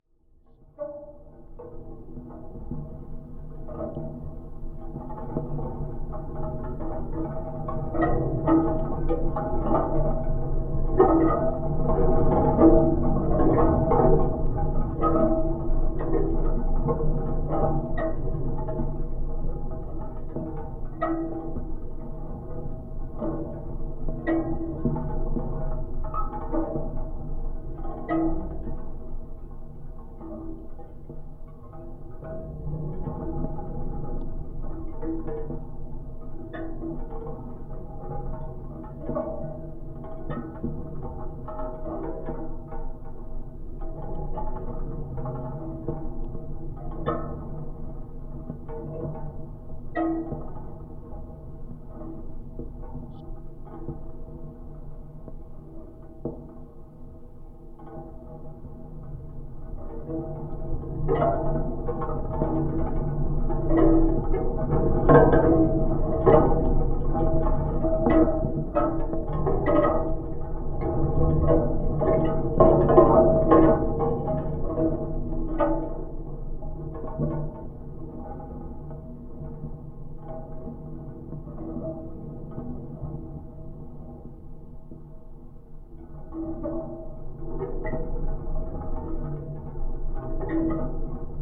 Aglona, Latvia, cinetic sculpture
Geophone recording of wind/cinetic sculpture on Christ King Hill